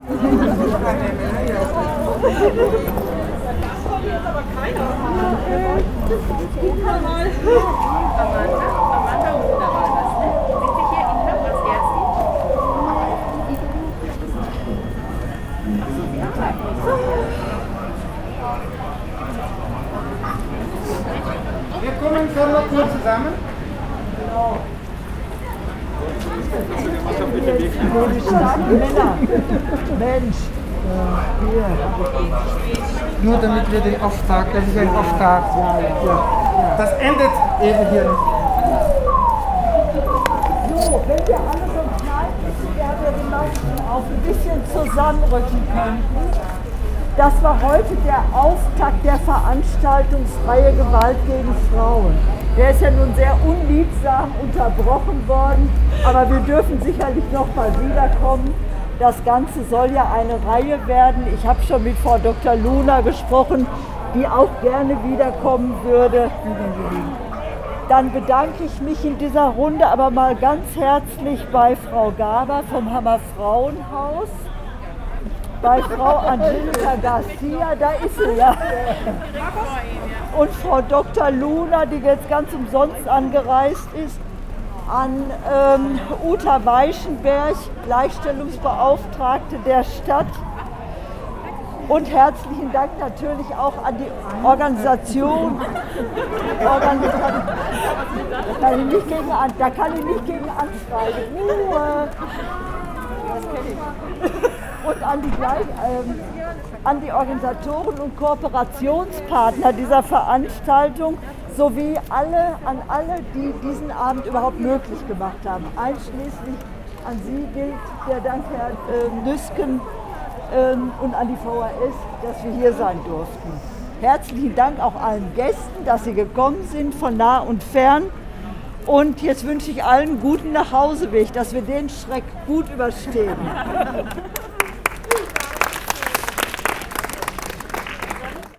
outside the VHS, Platz der Deutschen Einheit, Hamm, Germany - alarm raising events...
"Gewalt gegen Frauen" lokal und in anderen Ländern war das alamierende Thema des Abends. Ein Feueralarm ging los und schnitt die bereits pandemie-gerecht gekürzte Veranstaltung weiter ab; aber zum Glück war das Mikrofon dabei, um zumindest ein spontanes Live-Interview mit der noch nicht zu Wort-gekommenen Rednerin draussen vor dem Gebäude aufzuzeichnen, während gleichzeitig die Feuerwehr einmarschierte...
Nordrhein-Westfalen, Deutschland, 15 September 2020, 20:36